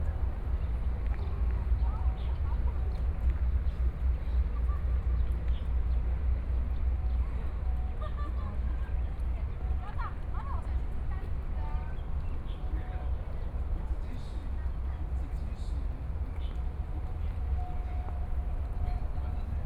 Weiwuying Metropolitan Park, Kaohsiung City - in the Park
Sparrows, Sitting in the Park, Birds singing, Traffic Sound
15 May 2014, 16:47